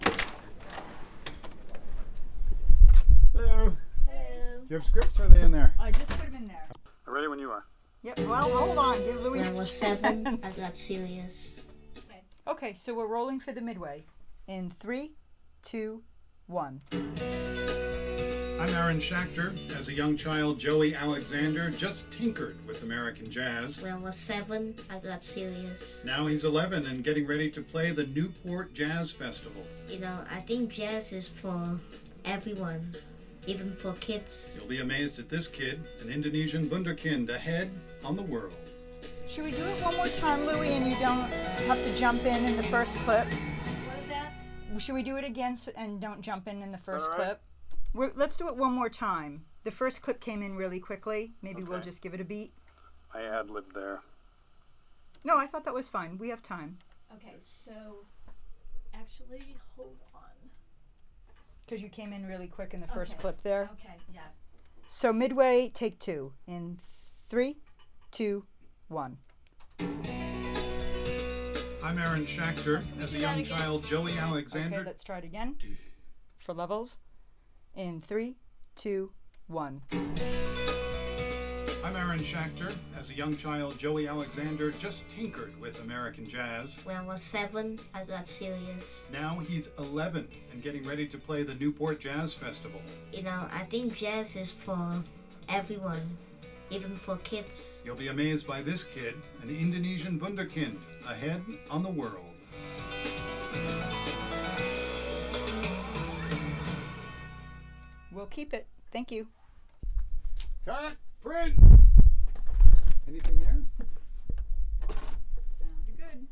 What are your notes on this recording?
Inside the studios at PRI's The World.